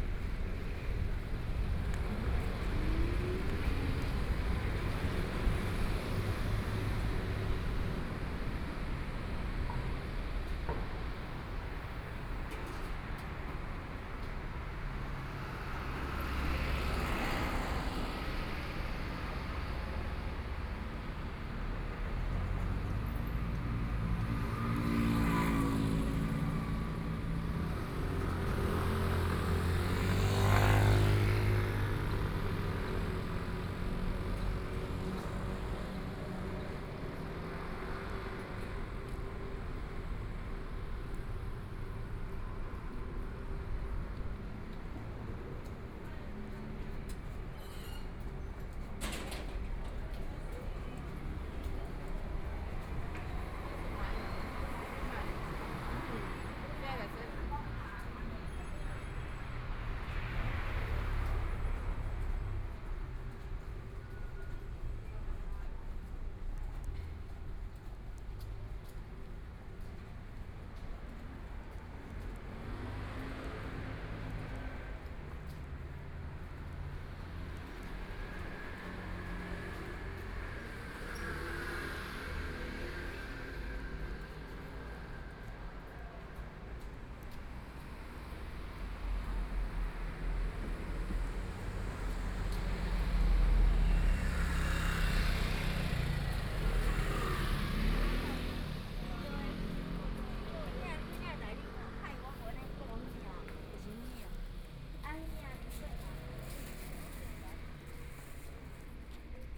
Lane, Section, Zhōngyāng North Rd, Beitou - Mother and child
Mother and child, Walking on the road, Traffic Sound, Binaural recordings, Zoom H6+ Soundman OKM II